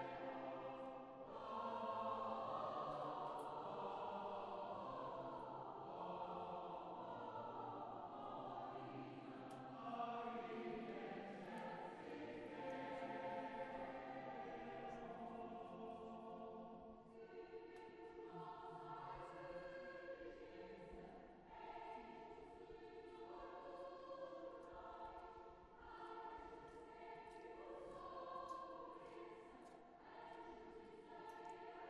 Lisboa, Igreja de Loreto Christmas
Christmas choir concert
Portugal, European Union